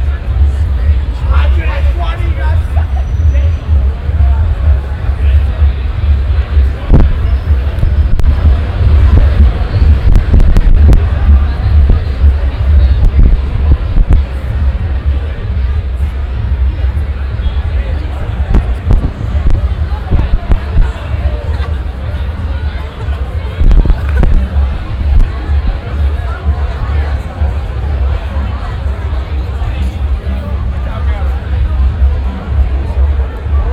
vancouver, granville street, friday night party zone
friday night in downtowns favourite party zone. police cars block the street. big crowd of people all over the street and in rows in front of different clubs.
soundmap international
social ambiences/ listen to the people - in & outdoor nearfield recordings